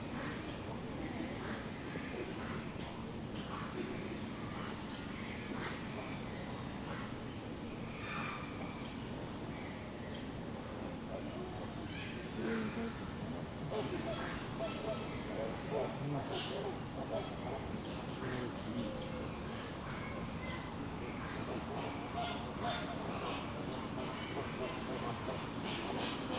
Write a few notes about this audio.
General atmosphere in Burgers' Zoo, Arnhem. Recorded with my Zoom's internal mics near the Flamingo pond.